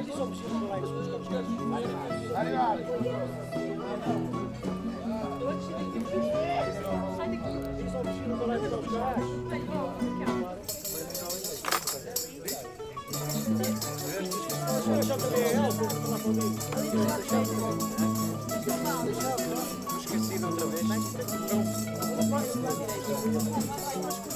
jantar em Trás-os-Montes
Portugal, 27 August 2010